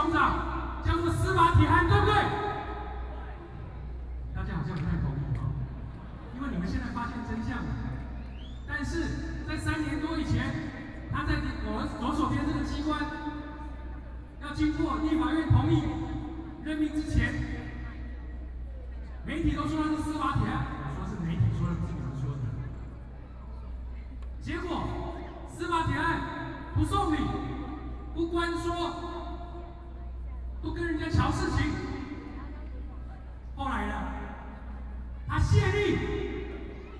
Jinan Rd., Taipei City - Protest Speech
Shouting slogans, Binaural recordings, Sony PCM D50 + Soundman OKM II